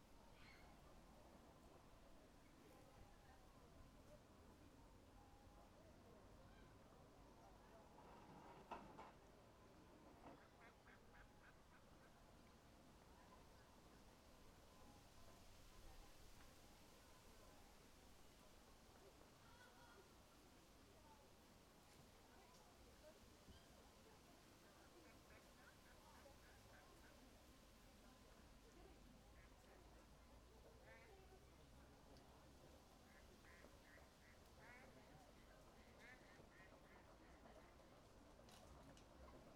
Trakai Historical National Park, Trakai, Lithuania - Ducks